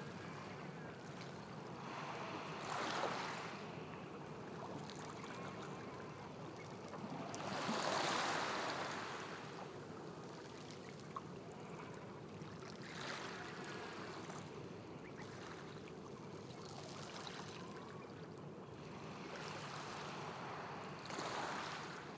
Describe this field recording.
Recorded using a SoundDevices Mixpre3 and a Sennheiser 8050 stereo set.